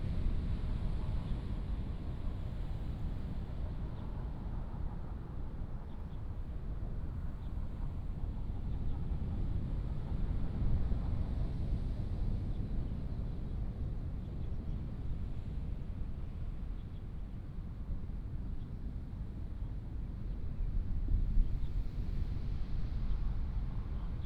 Jiuxianglan, Taimali Township 台東縣 - on the beach
on the beach, Sound of the waves